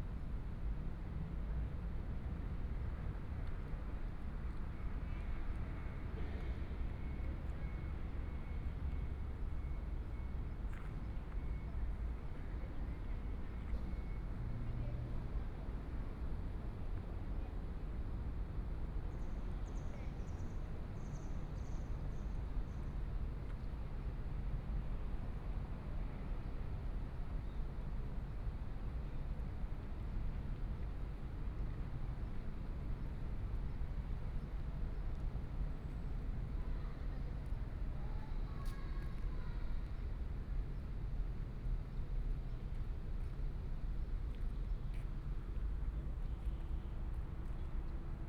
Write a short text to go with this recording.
Transformation of the old paper mill, In the square, Tourists, Air conditioning noise, Traffic sound, Binaural recordings, Sony PCM D100+ Soundman OKM II